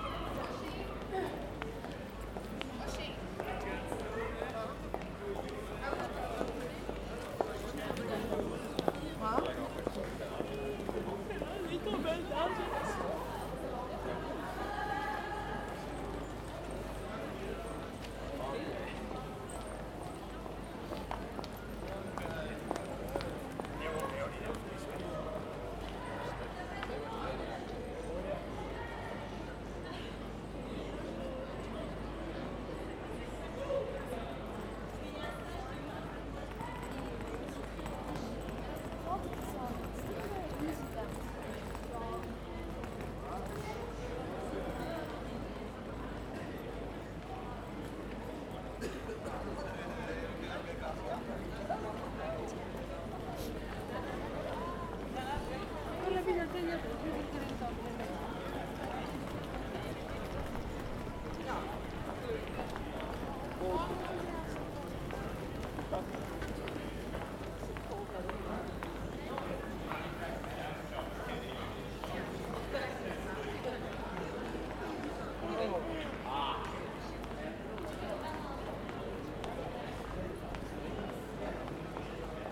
{"title": "North City, Dublín, Irlanda - Earl Street North Multilingual", "date": "2014-03-17 10:56:00", "description": "People walking through this passage heading Saint Patrick's parade route", "latitude": "53.35", "longitude": "-6.26", "altitude": "11", "timezone": "Europe/Dublin"}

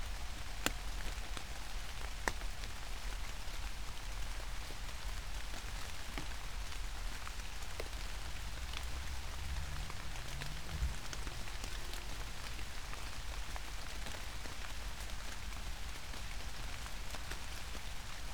{"title": "Königsheide, Berlin - forest ambience at the pond", "date": "2020-05-23 02:00:00", "description": "2:00 it's raining", "latitude": "52.45", "longitude": "13.49", "altitude": "38", "timezone": "Europe/Berlin"}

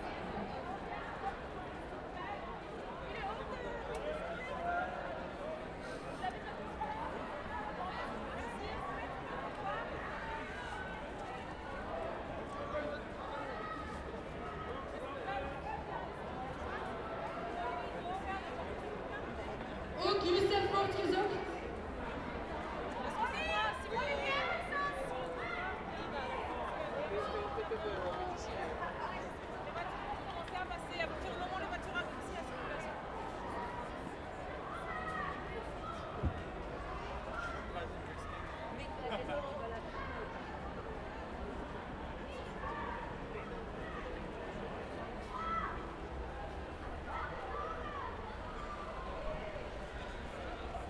{"title": "Maurice Lemonnierlaan, Brussel, België - PVDA/PTB climate protests", "date": "2019-01-31 12:35:00", "description": "PVDA/PTB continues trying to hijack the climate protest after the demonstration has passed by", "latitude": "50.84", "longitude": "4.34", "altitude": "20", "timezone": "GMT+1"}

